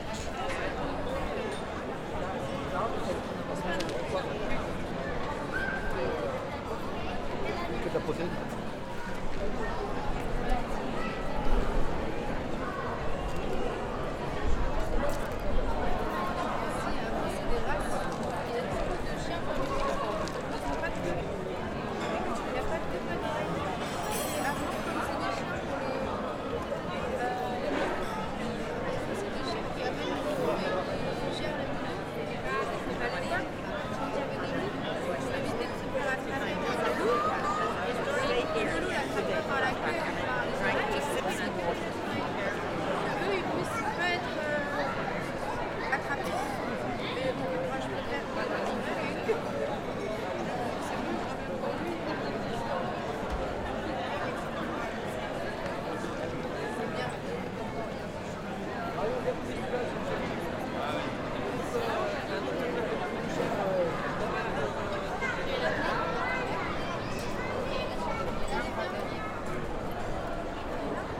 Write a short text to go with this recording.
in front of the cathedral, Captation : ZOOM H6